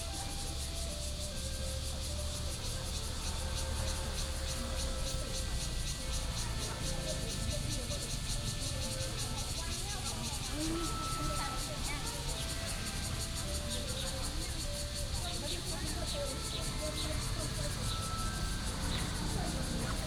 黎孝公園, Da’an Dist., Taipei City - Morning in the park
Group of elderly people doing aerobics, Falun Gong, Bird calls, Cicadas cry